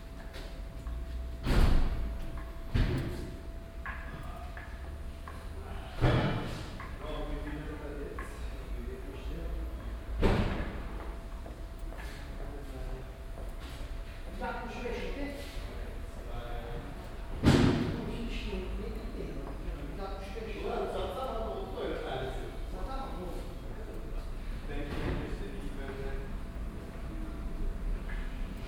cologne, maybachstrasse, freie tankstelle, beladungen
nachmittags beim beladen eines lkw im halligen innenbau einer freien tankstelle, ein parkendes fahrzeug, männerkonversationen
soundmap nrw: social ambiences/ listen to the people - in & outdoor nearfield recordings
28 August 2008, ~09:00